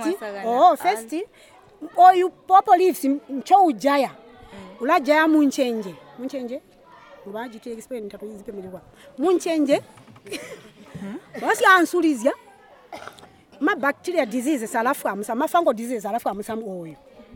Mweezya Primary School, Sinazongwe, Zambia - Cleopatra's Chemistry...
Cleopatra of Mweezya Women’s Club gives us an impressively detailed presentation about the women’s production of organic pesticides… this will be one of the recordings, which we later take on-air at Zongwe FM in a show with DJ Mo...